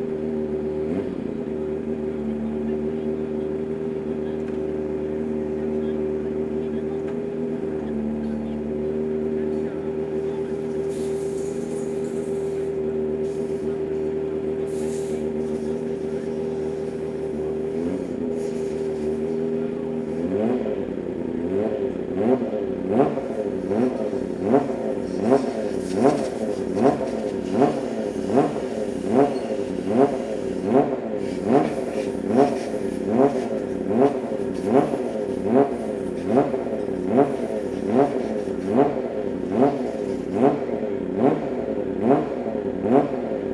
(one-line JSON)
{"title": "Silverstone Circuit, Towcester, UK - day of champions 2013 ... pit lane walkabout ...", "date": "2013-08-29 12:03:00", "description": "day of champions ... silverstone ... rode lavaliers clipped to hat to ls 11 ...", "latitude": "52.08", "longitude": "-1.02", "altitude": "156", "timezone": "Europe/London"}